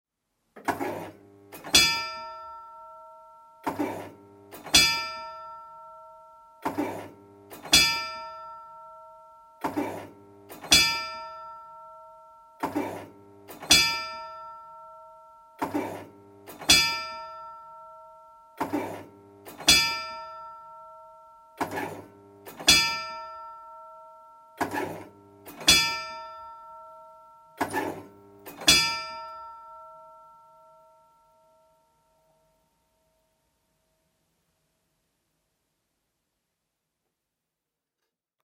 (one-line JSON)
{"description": "belfry of stafsäter herrgård, recorded inside the belfry.\nstafsäter recordings.\nrecorded july, 2008.", "latitude": "58.29", "longitude": "15.67", "altitude": "106", "timezone": "GMT+1"}